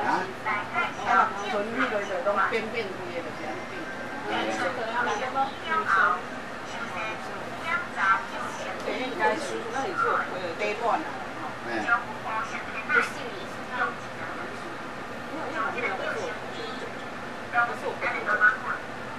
20091021On the Ferry
Cijin Ferry, KaoShiung. Taiwanese Broadcasting system.
Gushan District, Kaohsiung City, Taiwan